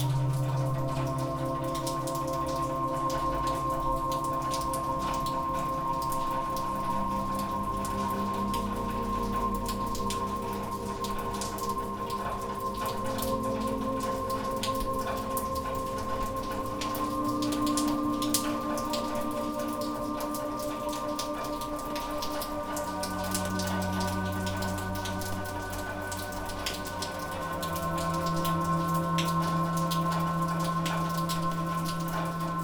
Random Acts of Elevator Music performing in the rain